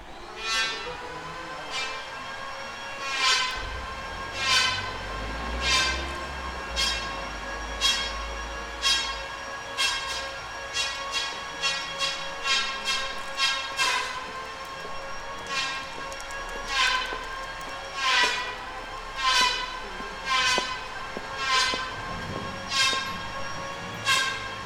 Perugia, Italia - under the Sciri tower
ambience of the street, workers, students walking and talking, birds
[XY: smk-h8k -> fr2le]
Perugia, Italy